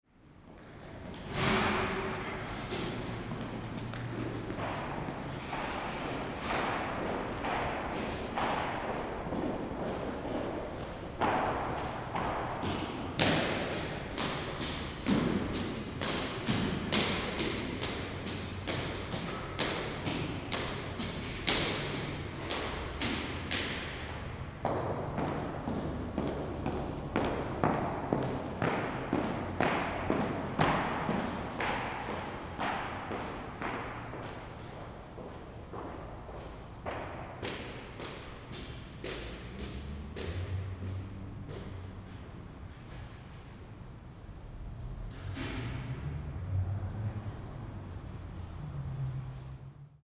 Bundesbank, Leibnizstr. - Eingangshalle, Treppe, Schritte
04.12.2008 11:45: Eingangshalle, Treppe, Schritte / entry hall, stairway, footsteps